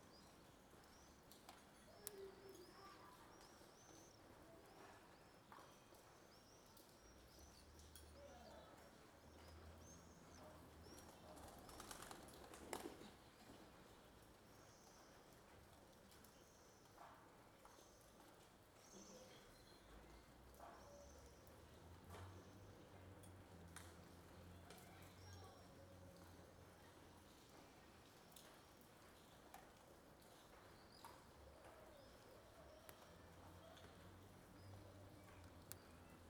Raw field recording made from an open window. The sound of the rain, birds, neighbours, and also sounds from the interior of the house. Recorded using a Zoom H2n placed on the ledge of the window.
19 April 2020, 08:30